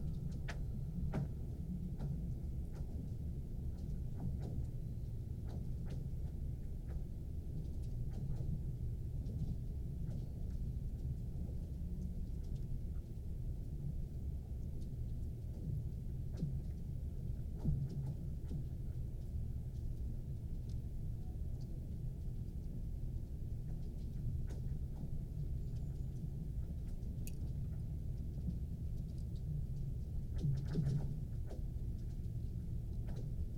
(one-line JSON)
{"title": "Chuncheon Lake Ice Formation, Gangwon-do, South Korea - Chuncheon Lake Ice Formation", "date": "2016-01-23 13:00:00", "description": "Chuncheon Lake Ice Formation. When the temperature suddenly fell in late January the Chuncheon Lake froze over entirely. The ice rapidly became thick enough for people to walk onto and start skating or ice fishing. Over the first few days the ice was forming rapidly and some incredible acoustic phenomena from the heaving and splitting of ice sheets could be heard echoing around the lake basin area.", "latitude": "37.88", "longitude": "127.71", "altitude": "73", "timezone": "Asia/Seoul"}